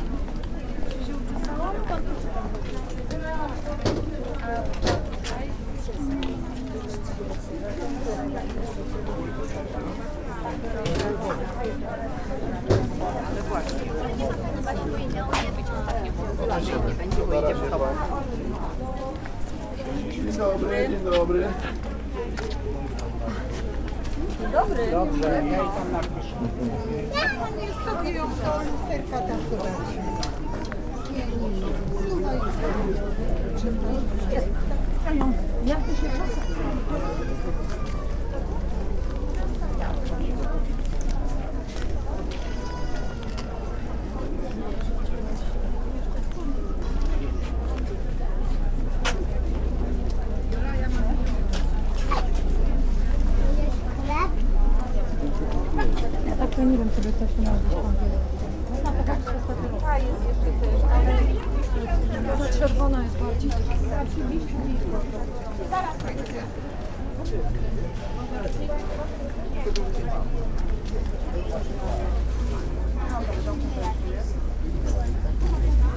plac Nowy, Kazimierz, Krakow - Sunday morning market
short walk around local market organized around one of the squares in Krakow in Kazimierz district. Lost of vendors selling cheap clothing, old electronics, trash and household chemicals. (roland r-07)